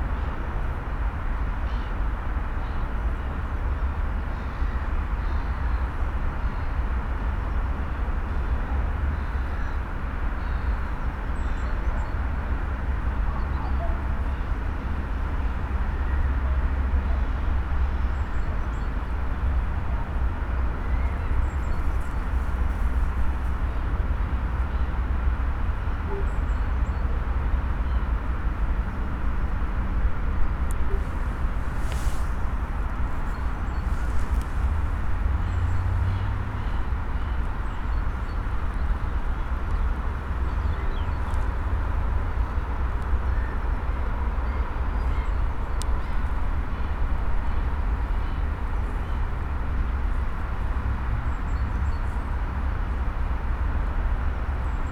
Binckhorst, Laak, The Netherlands - by the train tracks
recorded with binaural DPA mics and Edirol R-44